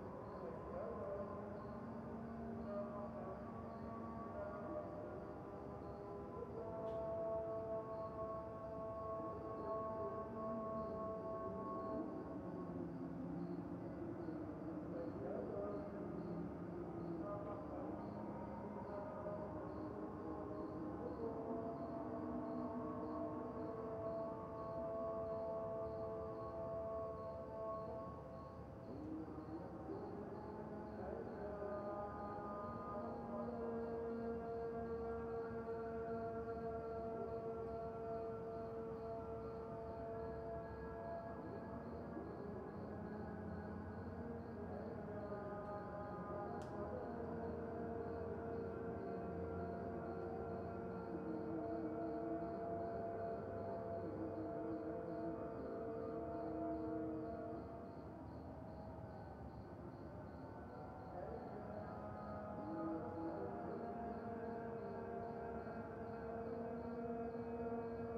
i a way these morning prayers represent soundmarks that are to be listened to at regular daily times all over the city. especially early in the morning there it is a good moment to choose to listen to the sound of the city, where the cultural and achitectural soundscape lighten up audibly the surrounding whereIn the soundlevel of traffic yet is pretty low...
this recording was made at 03:30 in the morning and the prayers started at 03:34 ( 2 X neumann KM184 + sounddevice 722 - AB)